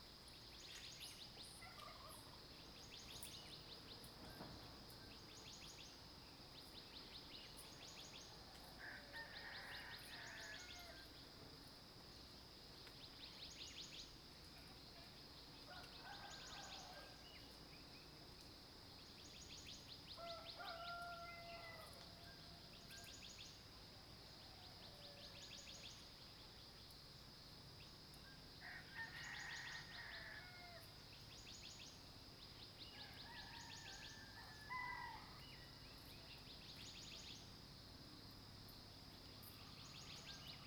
埔里鎮桃米里, Nantou County - Early morning
Bird calls, Crowing sounds
Zoom H2n MS+XY